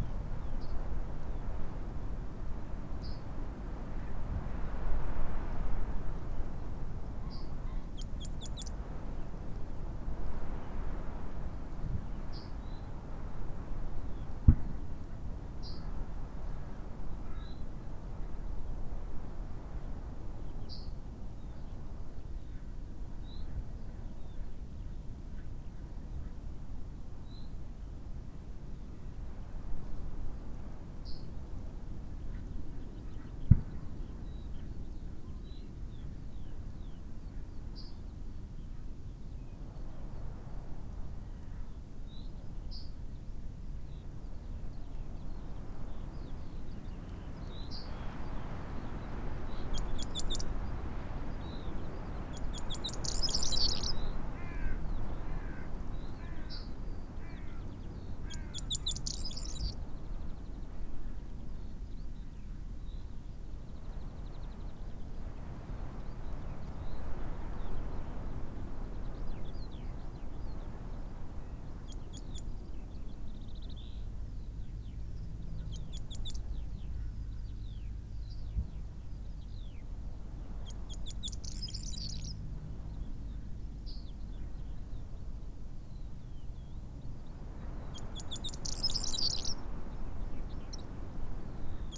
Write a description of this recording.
corn bunting ... call ... song ... xlr SASS to zoom h5 ... bird calls ... song ... yellowhammer ... crow ... skylark ... linnet ... pheasant ... blackbird ... very windy ... snow showers ... taken from unattended extended unedited recording ...